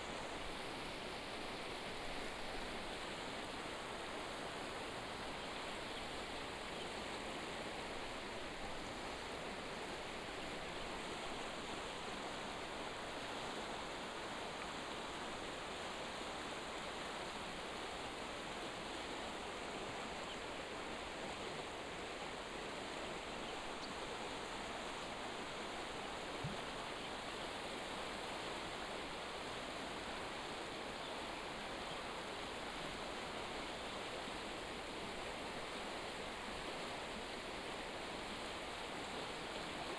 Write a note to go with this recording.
Stream sound, On the bridge, Bird cry